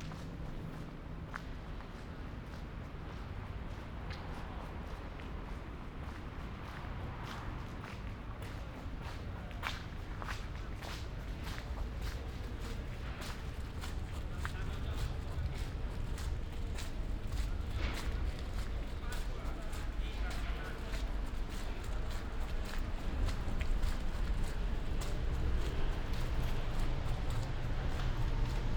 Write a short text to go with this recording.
“Outdoor market on Saturday afternoon with light rain at the time of covid19”: Soundwalk, Chapter CLX of Ascolto il tuo cuore, città. I listen to your heart, city. Saturday, March 6th, 2021. Walking in the outdoor market at Piazza Madama Cristina, district of San Salvario, four months of new restrictive disposition due to the epidemic of COVID19. Start at 3:47 p.m. end at 4:05 p.m. duration of recording 17'39”, The entire path is associated with a synchronized GPS track recorded in the (kml, gpx, kmz) files downloadable here: